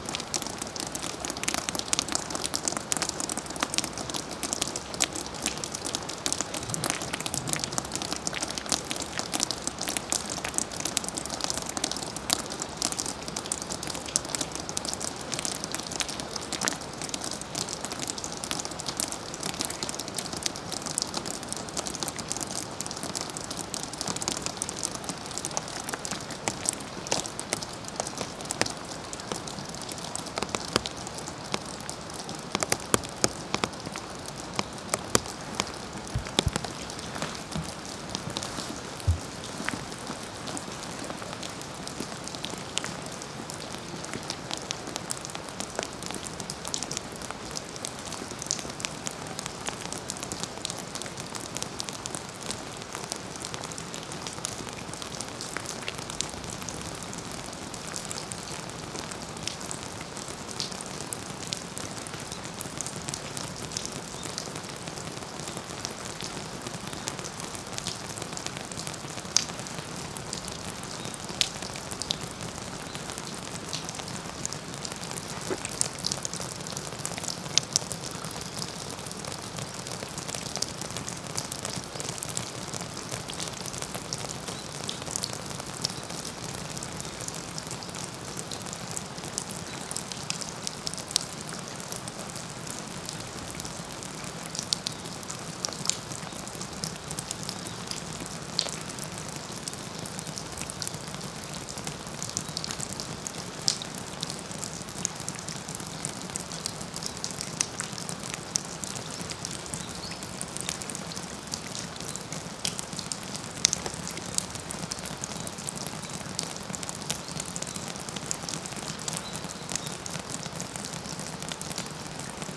Dripping eaves at 삼화사 (Samhwasa)
Circum-ambulation of Samhwasa temple during a rainfall. Mureung Valley, East Coast of South Korea. Although the close-by river is audible, this building is far from any main roads and avoids traffic sound disturbance.
Donghae-si, Gangwon-do, South Korea, 6 October